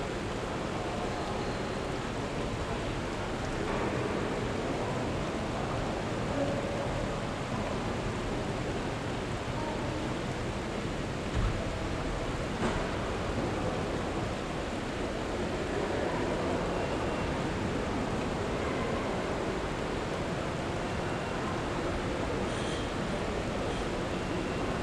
Wersten, Düsseldorf, Deutschland - Düsseldorf. Provinzial insurance building, main hall
Inside the main hall of the building of the insurance provider Provinzial. The high glas and stone walled hall is filled with living plants and trees and a water stream runs through the building. The sound of the water streaming by, the sounds of steps and people talking as they walk though the hall and the beeping signals of elevators.
This recording is part of the exhibition project - sonic states
soundmap nrw -topographic field recordings, social ambiences and art places
Düsseldorf, Germany